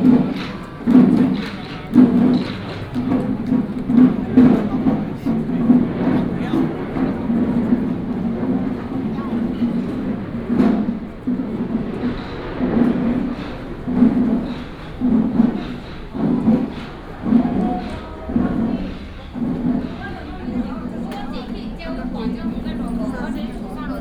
Dalongdong Baoan Temple, Taipei City - temple fair
Walking in the temple, Traffic sound, sound of birds
Taipei City, Taiwan, 10 April, ~16:00